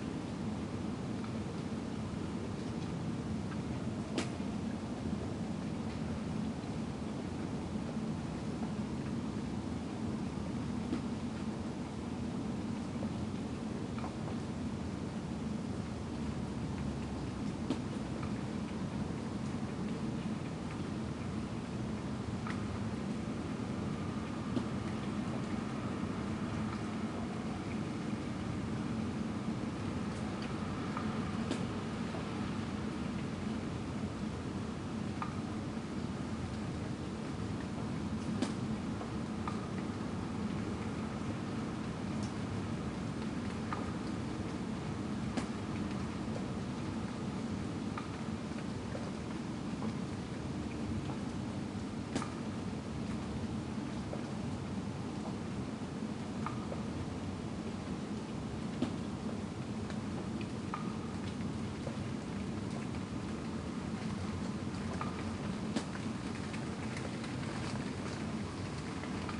TherapiaRd. London, UK - Therapia Drizzle
Early morning drizzle at my windowsill. Recorded with a pair of DPA4060s and a Marantz PMD661.